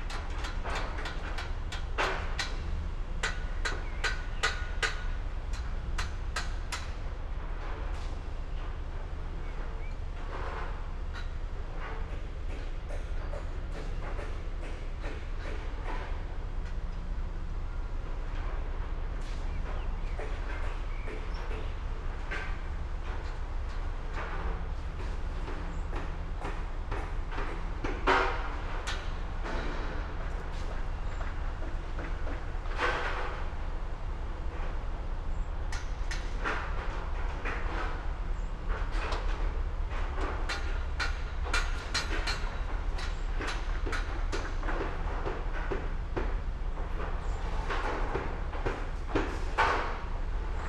Across the river from me they are building 112 apartments. Sony M10 with Primo boundary array.